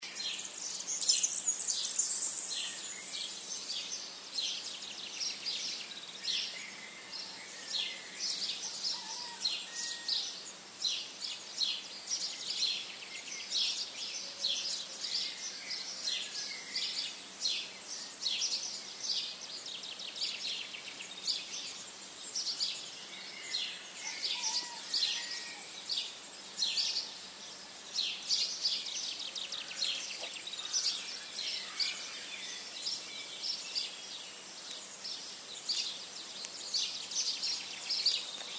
Trento, Villamontagna - Sunrise on my balcony
Villamontagna Trentino, Italy